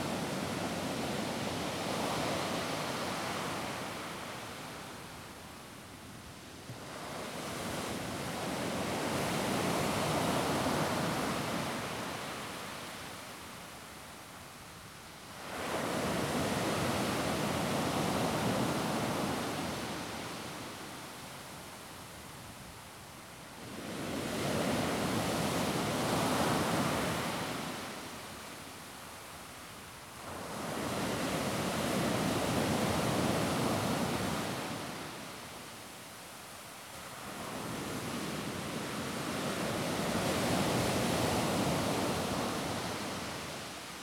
Island - Atmospere of mystical beach
Iceland